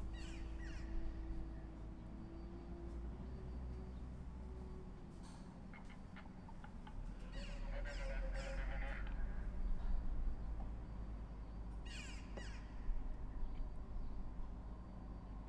Borne Sulinowo, Polska - seller of gas bootles
Every day, between 7 and 8 this man is doing the rounds around the city. It sells gas bottles and by the voice informs people about this fact. It sounds like a mantra.
21 July 2015, ~07:00